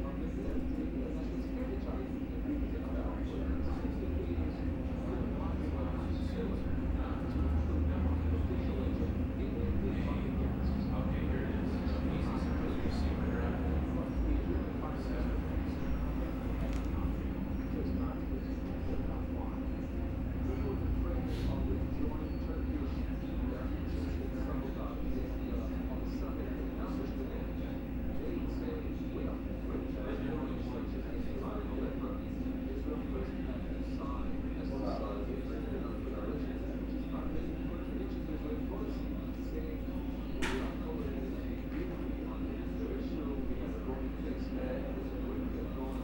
neoscenes: Big O Tire waiting room